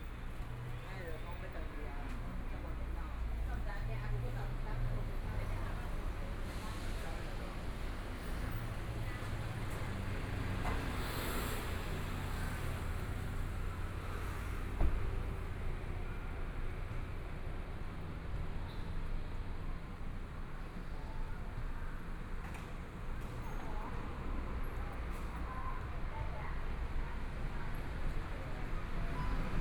Linsen N. Rd., Zhongshan Dist. - on the Road
Walking through the road, Traffic Sound, Motorcycle sound, Various shops voices, Binaural recordings, Zoom H4n + Soundman OKM II
Taipei City, Taiwan, 6 February 2014